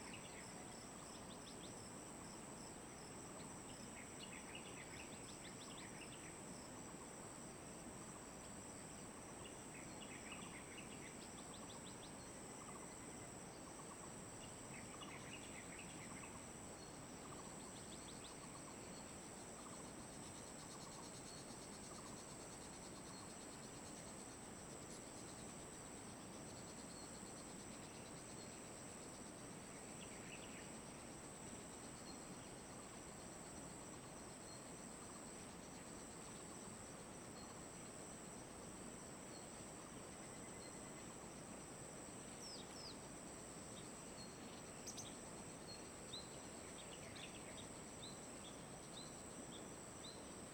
{"title": "Liqiu, Jinfeng Township - Farmland in the Valley", "date": "2018-04-01 17:19:00", "description": "stream, New agricultural land in aboriginal, Bird call, Farmland in the Valley\nZoom H2n MS+XY", "latitude": "22.52", "longitude": "120.92", "altitude": "78", "timezone": "Asia/Taipei"}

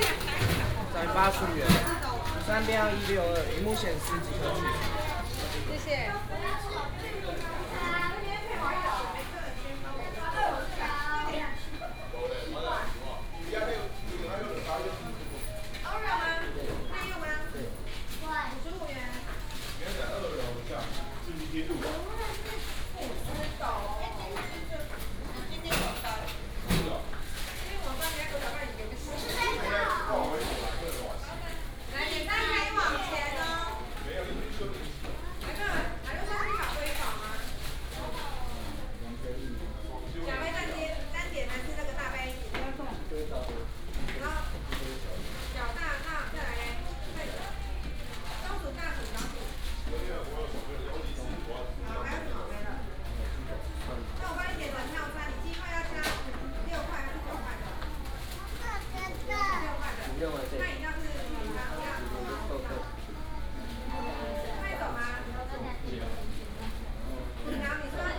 {"title": "麥當勞羅東興東店, Yilan County - Diner counter", "date": "2017-12-09 10:52:00", "description": "At the fast food shop, Diner counter, Binaural recordings, Sony PCM D100+ Soundman OKM II", "latitude": "24.68", "longitude": "121.77", "altitude": "15", "timezone": "Asia/Taipei"}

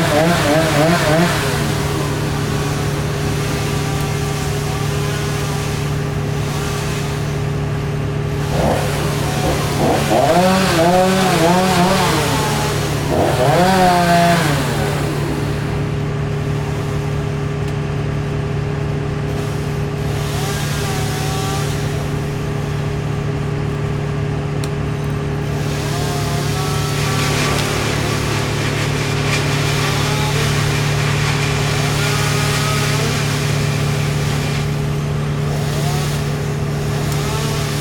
{"title": "Emerald Dove Dr, Santa Clarita, CA, USA - Chainsaws & Workers", "date": "2020-05-21 07:45:00", "description": "Chainsaws were out early this morning removing dead trees around the property. Close up binaural recording.", "latitude": "34.41", "longitude": "-118.57", "altitude": "387", "timezone": "America/Los_Angeles"}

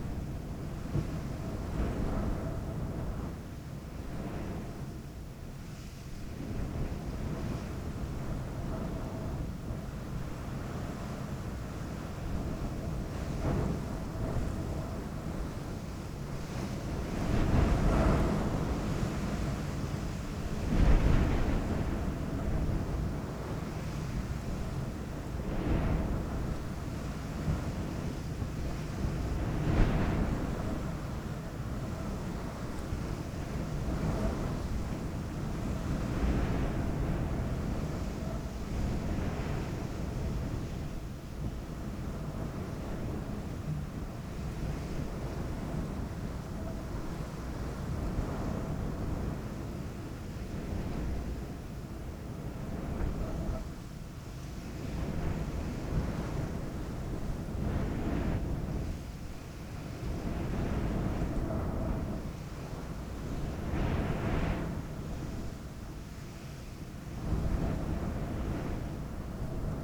{"title": "Cafe Tissardmine, Tissardmine, Marokko - Desert Wind", "date": "2019-04-03 14:30:00", "description": "A sand storm at Cafe Tissardmine, recorded with two AKG SE 300B placed by each window.", "latitude": "31.29", "longitude": "-3.98", "altitude": "764", "timezone": "Africa/Casablanca"}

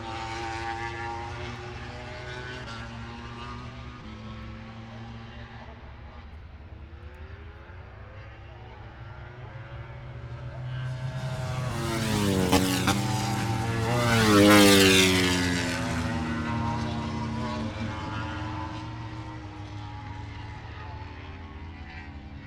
{"title": "Silverstone Circuit, Towcester, UK - British Motorcycle Grand Prix 2017 ... moto grand prix ...", "date": "2017-08-25 09:55:00", "description": "moto grand pix ... free practice one ... maggotts ... open lavalier mics on T bar and mini tripod ...", "latitude": "52.07", "longitude": "-1.01", "altitude": "158", "timezone": "Europe/London"}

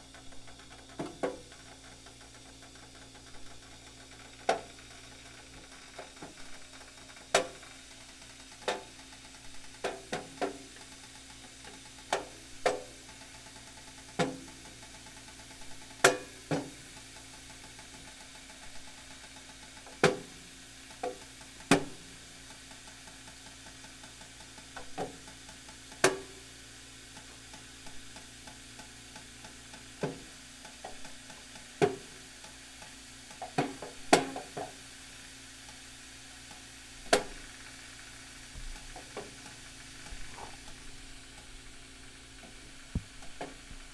{"title": "neoscenes: dripping solar water system", "date": "2009-08-15 13:22:00", "latitude": "34.57", "longitude": "-112.47", "altitude": "1715", "timezone": "Australia/NSW"}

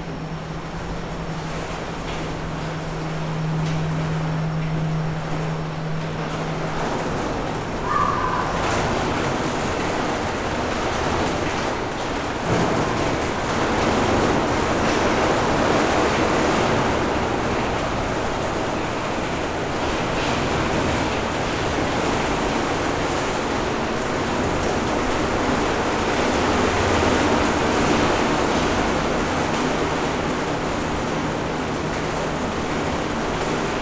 {"title": "Poznan, Mateckigo street, stair case - dynamic rain", "date": "2015-09-06 19:03:00", "description": "the noise is the sound of a very heavy rain outside. every once in a while the downpour smashes on the lid leading to the roof and on a plastic window. Someone in the storage unit is having a conversation through their phone's loudspeaker. the elevator goes down - its machinery is just on the other side of the wall. inhabitants walking on the floor.", "latitude": "52.46", "longitude": "16.90", "altitude": "97", "timezone": "Europe/Warsaw"}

